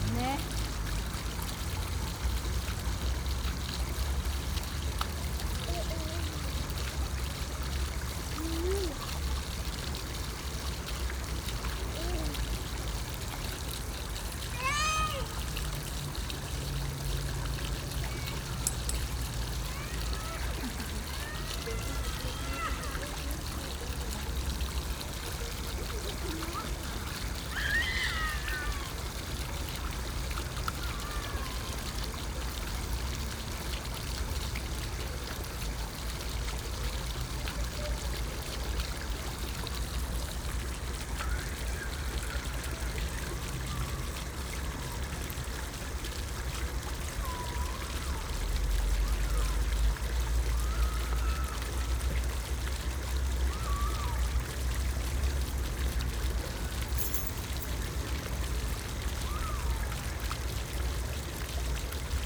Young children like this small fountain. You can hear one protesting (briefly) as he is carried away from it. Once there were more elaborate toys - waterwheels, scopes etc - for playing with the water but they are no longer here. So they just climb on the low concrete and get thoroughly wet. There is a gurgling drain on one side.